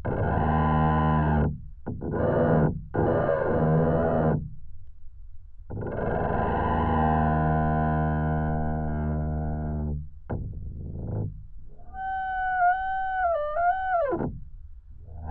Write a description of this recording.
Windy evening. Amazingly singing, moaning tree. A pair contact microphones.